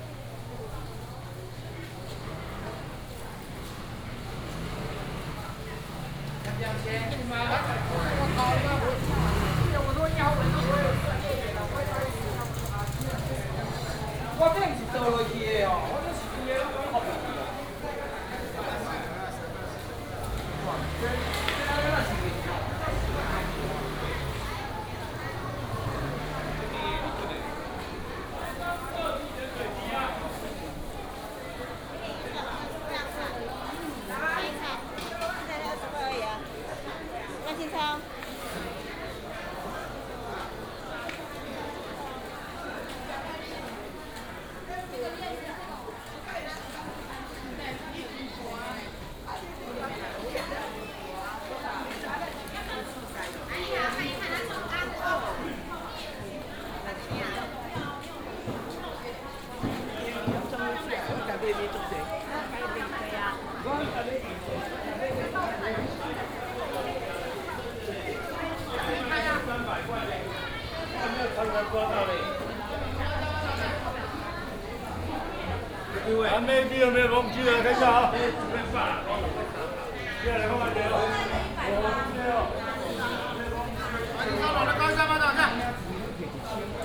華勛市場, Zhongli Dist., Taoyuan City - Traditional market
Traditional market, Traffic sound, Binaural recordings, Sony PCM D100+ Soundman OKM II
Taoyuan City, Taiwan, 29 November 2017, 08:30